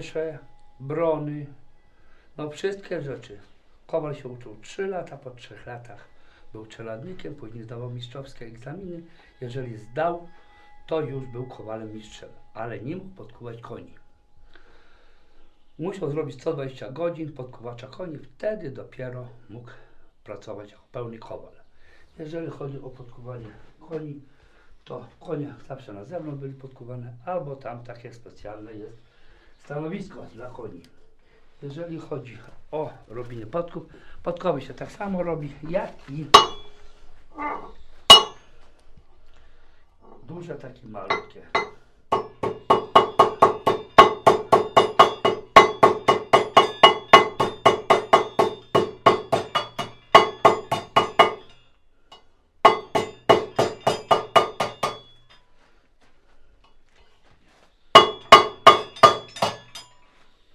Wdzydzki Park Krajobrazowy, Kościerzyna, Polska - Wyrabianie podków
Dźwięk nagrany w Muzeum Kaszubskim Parku Etnograficznym w ramach projektu : "Dźwiękohistorie. Badania nad pamięcią dźwiękową Kaszubów".
Wdzydze Kiszewskie, Poland, 14 June 2014